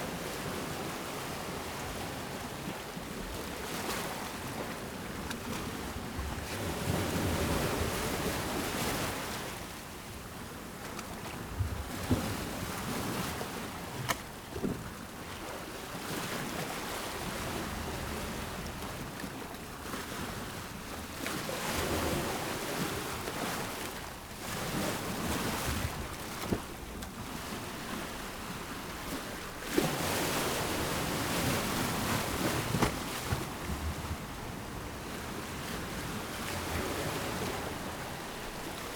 Incoming tide ... open lavaliers on t bar fastened to fishing landing net pole ...
Whitby, UK, 25 November 2016, 10:10